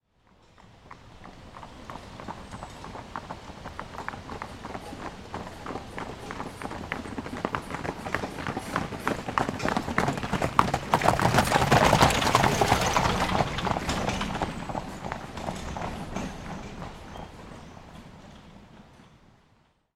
Kohlscheid / Würselen Wurmtal
four-in-hands marathon competition CHIO Aachen